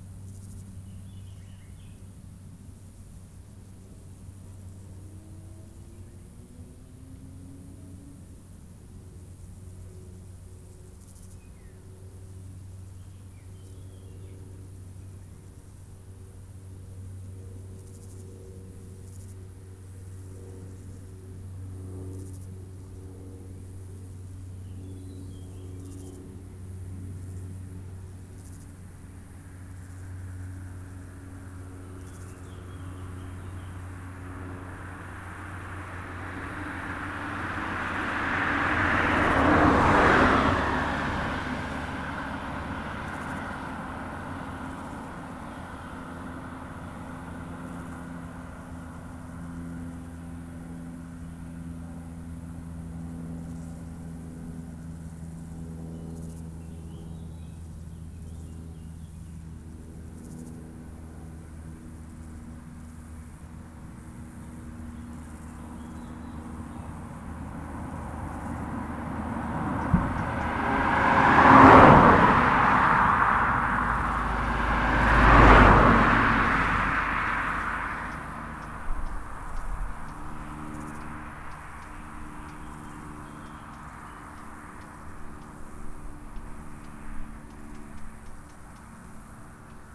{
  "title": "Rte de Vions, Chindrieux, France - Le talus",
  "date": "2022-07-25 09:50:00",
  "description": "Le talus d'herbes sèches abrite de nombreux insectes, dans cette ligne droite les voitures passent vite. ZoomH4npro posé sur la selle du vélo.",
  "latitude": "45.83",
  "longitude": "5.83",
  "altitude": "234",
  "timezone": "Europe/Paris"
}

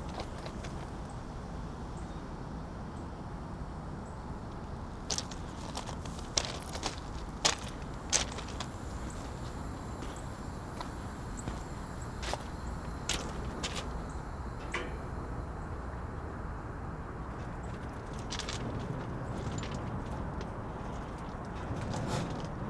{
  "title": "Train Strain, Memorial Park, Houston, Texas, USA - Train Strain",
  "date": "2012-11-16 16:30:00",
  "description": "Binaural - Walking along the railroad, I heard some signs that a stalled train may be preparing to move...\nCA14 > DR100 MK2",
  "latitude": "29.77",
  "longitude": "-95.45",
  "altitude": "30",
  "timezone": "America/Chicago"
}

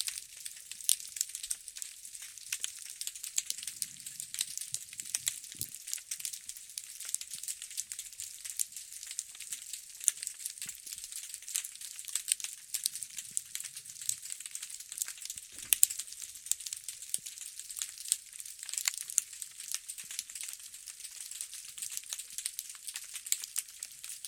{
  "title": "Kalk Bay Harbour, South Africa - Snapping Shrimps",
  "date": "2017-11-16 16:36:00",
  "description": "Snapping Shrimps recorded using a Brodan Hydrophone to a Zoom H2n",
  "latitude": "-34.13",
  "longitude": "18.45",
  "timezone": "Africa/Johannesburg"
}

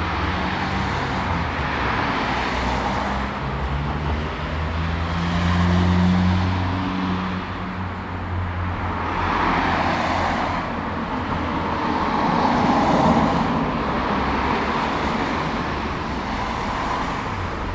{
  "title": "Bulevardul Alexandru Vlahuță, Brașov, Romania - Boulevard traffic and sonic memories",
  "date": "2021-01-02 16:20:00",
  "description": "The soundscape of today versus the soundscape of a memory…This is a recording I made today of the place where I used to go to elementary school. What you hear now is medium traffic, rhythmic, mostly made out of small cars and vans. Twenty years ago it was very different, there was no diverting route in my town for heavy traffic so lorries used to drive through this very street. There used to be a tram line as well carrying people from one end of the city to the other (later it was dismantled). The rhythm was much more syncopated as heavy traffic was not all throughout the day, but was noisy, loud and low-frequency-based. The tram was the constant, with its metallic overtones. Now all of these are just sonic memories, sonic flashbacks that the mind brings when all it can hear is traffic, traffic, traffic. Recorded with Zoom H2n in surround mode",
  "latitude": "45.66",
  "longitude": "25.62",
  "altitude": "573",
  "timezone": "Europe/Bucharest"
}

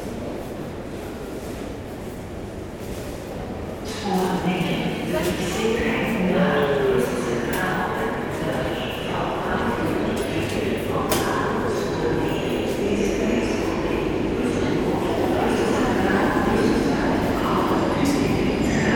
{
  "title": "Mechelen, Belgique - Mechelen station",
  "date": "2018-10-21 11:04:00",
  "description": "The Mechelen station. In first, a walk in the tunnel, with announcements about a train blocked in Vilvoorde. After on the platforms, a train leaving to Binche, a lot of boy scouts shouting ! At the end, a train leaving to Antwerpen, and suddenly, a big quiet silence on the platforms.",
  "latitude": "51.02",
  "longitude": "4.48",
  "altitude": "17",
  "timezone": "Europe/Brussels"
}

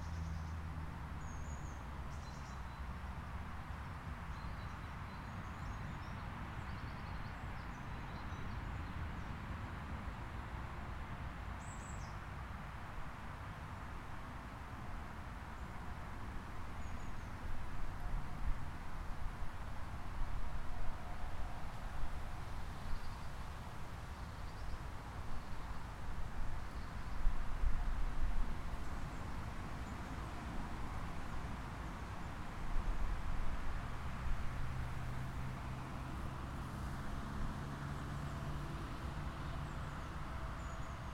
{
  "title": "Hérouville-Saint-Clair, France - Hidden",
  "date": "2014-08-12 12:15:00",
  "description": "This is a special place I wanted to record, not well known, beetween the road and a residential area. A community of rabbits live there.",
  "latitude": "49.20",
  "longitude": "-0.32",
  "altitude": "27",
  "timezone": "Europe/Paris"
}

